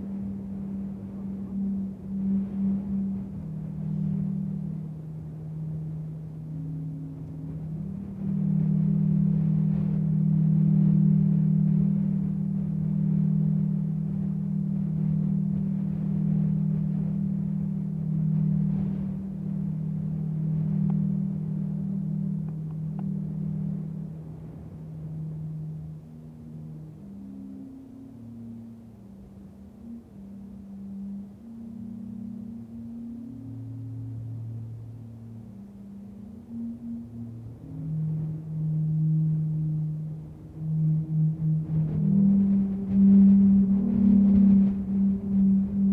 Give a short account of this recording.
On the top of the mountain, the wind is howling in the old installation of the ski station of Chacaltaya, Bolivia. The station was the highest in the world, and stops a few years ago, because of the smelting of the ice (du to global warming). The teleski cable and tube is still there and the wind sing in it! Sound recorded by a MS setup Schoeps CCM41+CCM8 with a Cinela Zephyx Windscreen, Sound Devices 788T recorder with CL8, MS is encoded in STEREO Left-Right, recorded in february 2014 on the top of Chacaltaya Mountain, above La Paz, Bolivia.